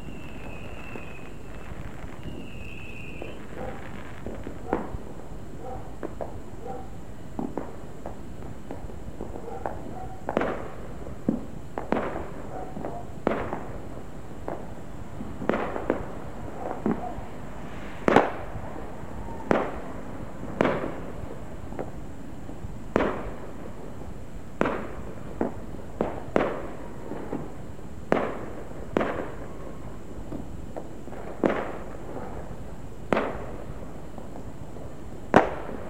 Lander Close, Old Hall - New Year's Fireworks
Fireworks on New Year's eve, and day, 2009 and 2010.